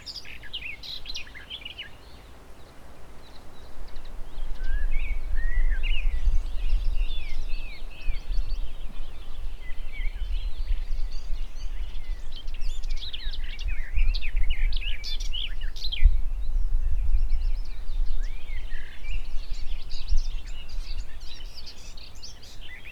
Hondenstrandje bij Tjeukemeer - at the shore of Lake Tjeuke
Lake Tjeuke is the biggest lake in this province Fryslan. You can hear many birds, a passing airplane and (shortly) my dog Lola.
Fryslân, Nederland, 14 August 2012